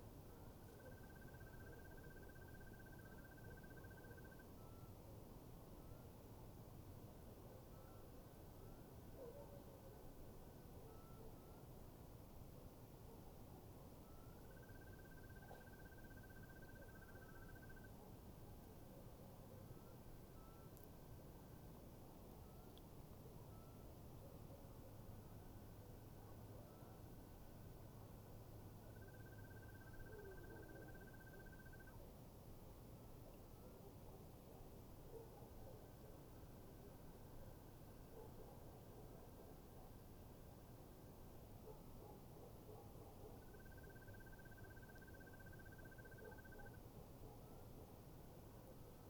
SP, Santa Maria di Licodia CT, Italy - Night ambiente
first evening without wind. storm is still